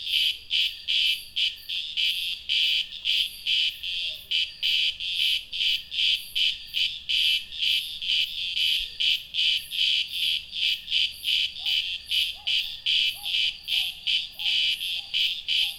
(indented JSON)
{
  "title": "Parque Natural Municipal Montanhas de Teresópolis, Petrópolis - RJ, Brasil - Cicadas",
  "date": "2014-12-22 19:00:00",
  "description": "Cicadas singing in a summer afternoon",
  "latitude": "-22.36",
  "longitude": "-42.96",
  "altitude": "920",
  "timezone": "America/Sao_Paulo"
}